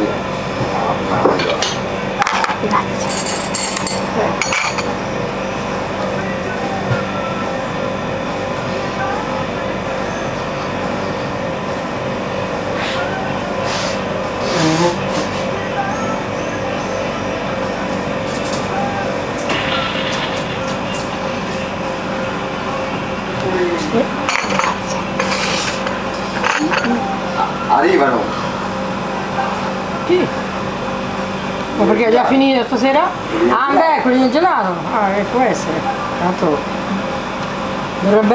Corso Roma, Serra De Conti AN, Italia - ice-cream time
Life inside Caffè Italia: some kids arrived to eat ice-creams.
Recorded with SONY IC RECORDER ICD-PX440